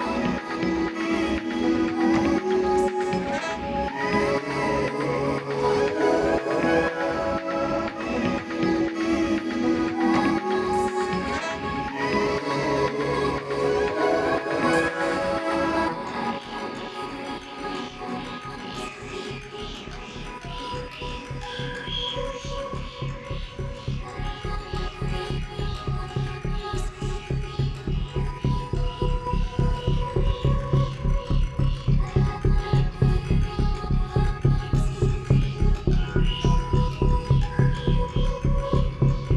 中国北京市西城区德胜里西街3号 邮政编码: 100120 - Life Wave